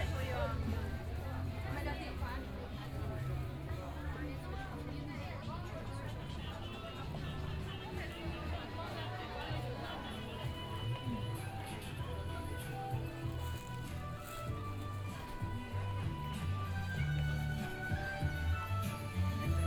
Tiantong Road, Shanghai - Shopping malls
Walking in the A small underground mall, Binaural recording, Zoom H6+ Soundman OKM II